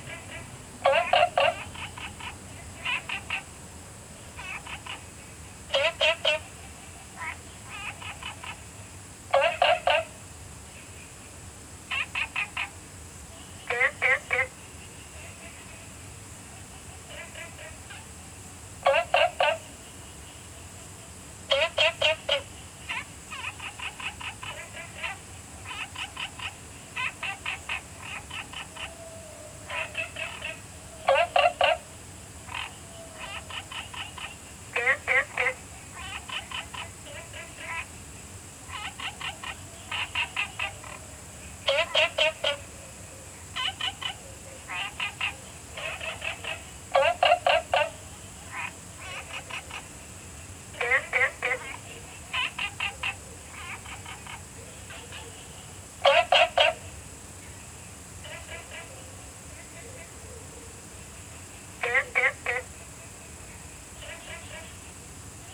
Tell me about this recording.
Frogs chirping, Small ecological pool, Zoom H2n MS+XY